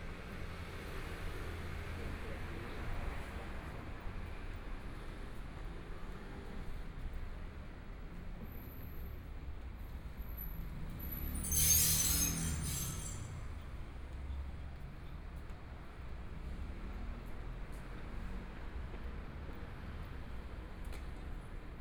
台北市中山區中吉里 - walking in the Street
Walking in the small streets, Traffic Sound, Binaural recordings, Zoom H4n+ Soundman OKM II
Taipei City, Taiwan, 20 January 2014, 16:19